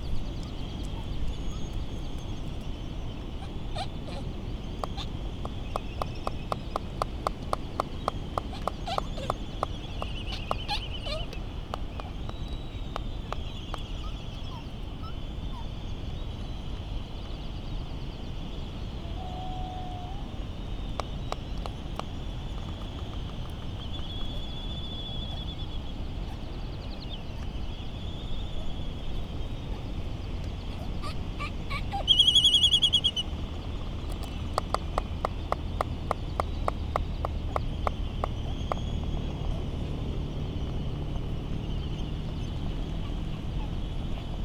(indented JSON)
{
  "title": "United States Minor Outlying Islands - Laysan albatross soundscape ...",
  "date": "2012-03-19 17:40:00",
  "description": "Laysan albatross soundscape ... Sand Island ... Midway Atoll ... recorded in the lee of the Battle of Midway National Monument ... open lavalier mics either side of a furry table tennis bat used as a baffle ...laysan albatross calls and bill clapperings ... very ... very windy ... some windblast and island traffic noise ...",
  "latitude": "28.21",
  "longitude": "-177.38",
  "altitude": "10",
  "timezone": "Pacific/Midway"
}